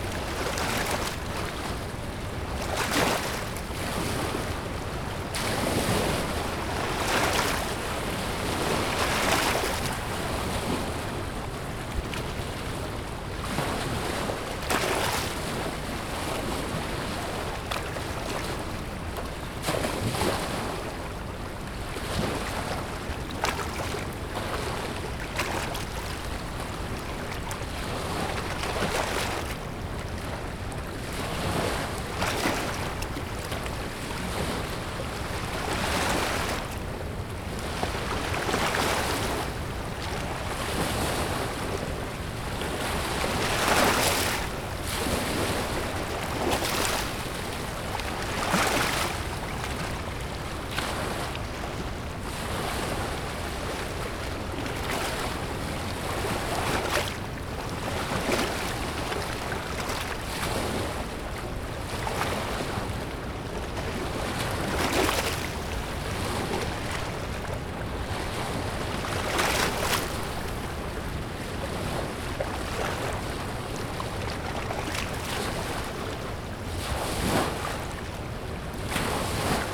Dam Heights Rd, Prairie Du Sac, WI, USA - Shore of the Wisconsin River near the Prairie du Sac Dam
Recorded at the public boat launch near the Prairie du Sac Dam. Sunny day, lots of boats fishing. Handheld recording with a Tascam DR-40 Linear PCM Recorder.